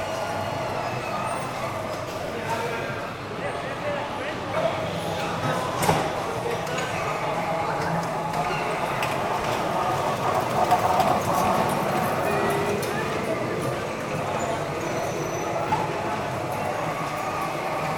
Gyumri, Arménie - Attraction park
Children, playing in an attraction park. Bumper cars and roller coaster. Armenian people is so kind that in the bumper cars area, they don't cause accidents ! This park so ramshackle, welcoming very poor people, that I was near to cry.